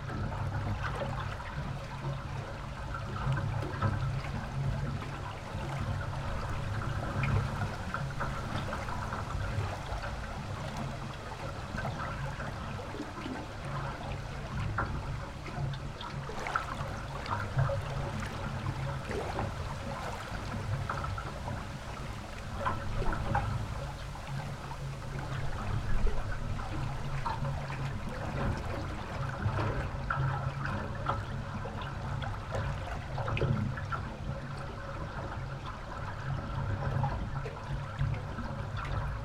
Utena, Lithuania, study of small bridge
after the flood. little metallic bridge over small river. 4 channels recording capturing happy waters and vibrations of the bridge itself
19 September 2017, 12:25